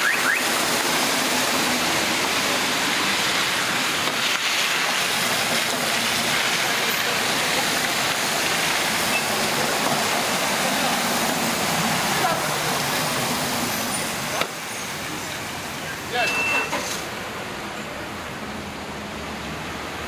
Montreal: St-Laurent (1601 block) - St-Laurent (1601 block)
equipment used: Panasonic RR-US750
It's winter. The sun will set in about an hour or so.
Montreal, QC, Canada, 9 January 2009, 16:00